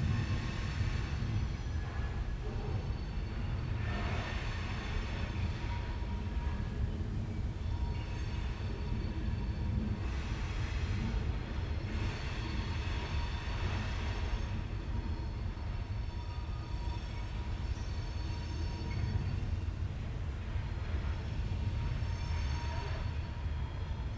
Praha, Zizkov, freight train - train in the distance
23 June 2011